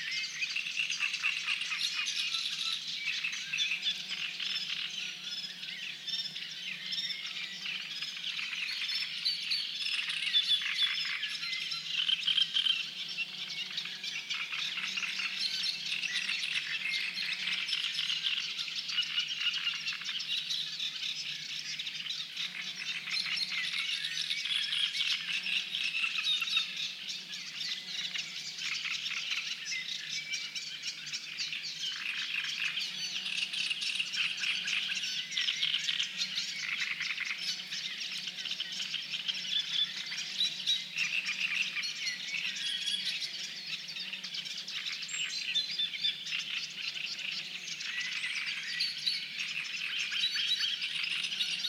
Puszcza Zielonka Landscape Park, Trojanka Springs - Frogs - peat bog alarm
Early morning on a cold May day, 5 a.m. Trojnka springs is a lovely, isolated place in the middle of Puszcza Zielonka (Zielonka Forest) Landscape Park. A place to sleep for many species of waterfowl and a popular waterhole for local animals. In this part of the year hundreds of frogs go through their annual mating rituals making extremely loud noises. The one who will do it the loudest will win the competition.
województwo wielkopolskie, Polska